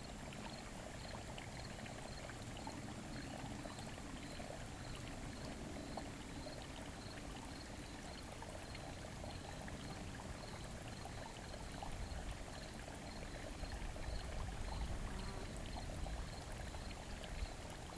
Cascades, Belgrade, Missouri, USA - Cascades
Recording between two cascades in a shut-in tributary of the Black River